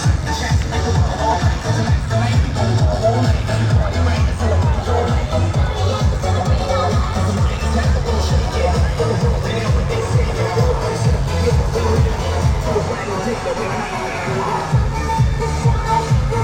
luna park, Novigrad, Croatia - glittery and dark side of luna park
amusement park sound scape from front and back side
15 July 2013, 20:56